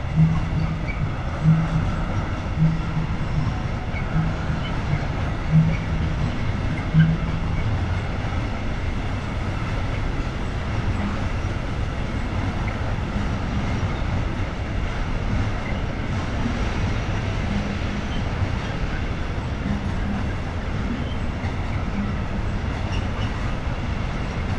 For an upcoming sound art project i recorded the new tram bridge (Kienlesberg Brücke) with mallet & Drum sticks. Recorded with 2 Lom Audio Usi Microphones in Spaced AB recording into a Sony M10